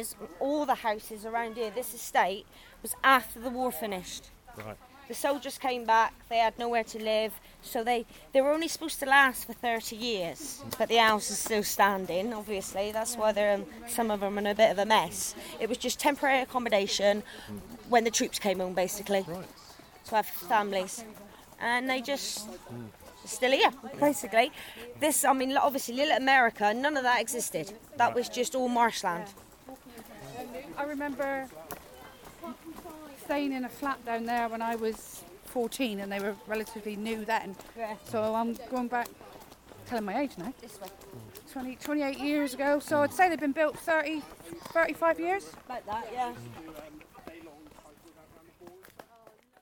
Efford Walk One: By subway talking about building houses - By subway talking about building houses